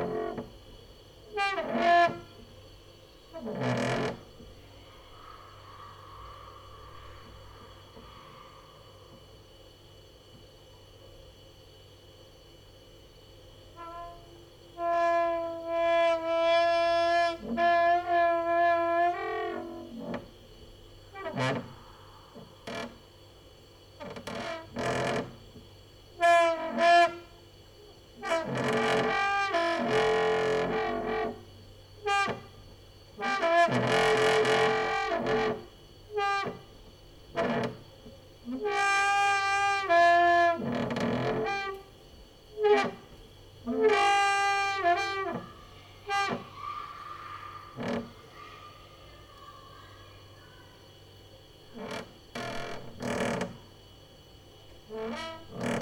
Mladinska, Maribor, Slovenia - late night creaky lullaby for cricket/23
cricket is quieter this year ... night walker outside, fridge inside